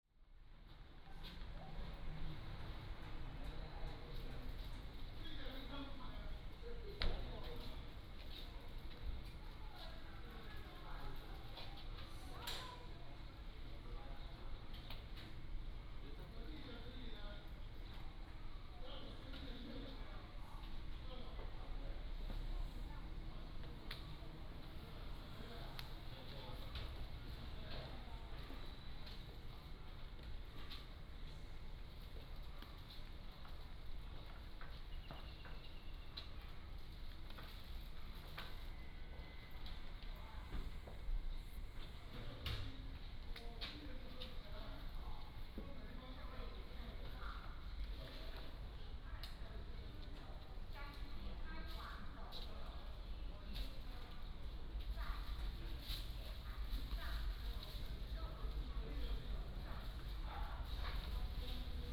Tongluo Station, 苗栗縣銅鑼鄉 - In the station platform
In the station platform, Train arrived
Tongluo Township, Miaoli County, Taiwan